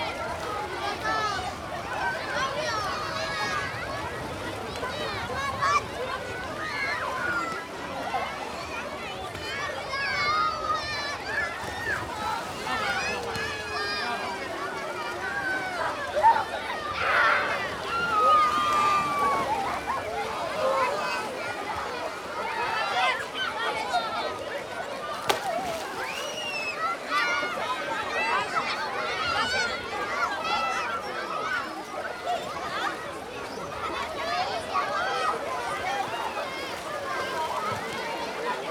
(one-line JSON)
{"title": "Poznan, at Rusalka Lake - activity at the pier", "date": "2014-07-06 12:30:00", "description": "beach and pier swarmed with sunbathers on a hot Sunday noon. children immensely enjoying the time at the lake jumping into it, swimming, splashing.", "latitude": "52.43", "longitude": "16.88", "altitude": "70", "timezone": "Europe/Warsaw"}